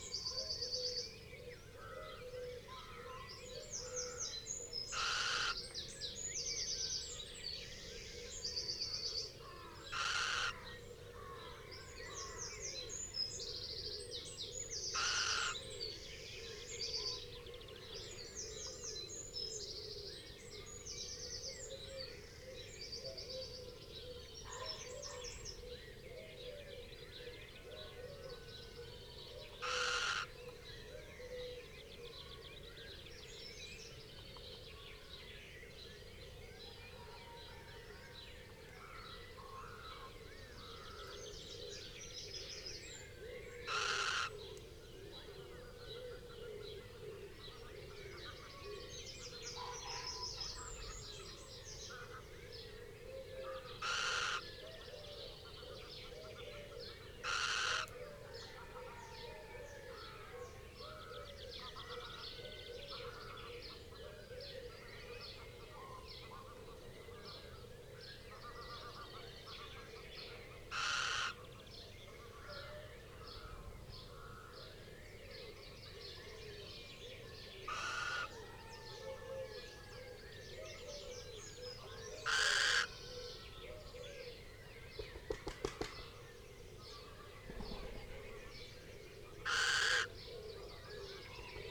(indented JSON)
{
  "title": "Unnamed Road, Malton, UK - little owl nest site ... close to ...",
  "date": "2019-04-21 05:00:00",
  "description": "little owl nest site ... close to ... pre-amped mics in SASS ... bird calls ... song from ... blackbird ... pheasant ... wood pigeon ... wren ... collared dove ... blue tit ... great tit ... red-legged partridge ... song thrush ... chaffinch ... dunnock ... crow ... male arrives at 25:30 and the pair call together till end of track ... plenty of space between the calls",
  "latitude": "54.12",
  "longitude": "-0.54",
  "altitude": "75",
  "timezone": "Europe/London"
}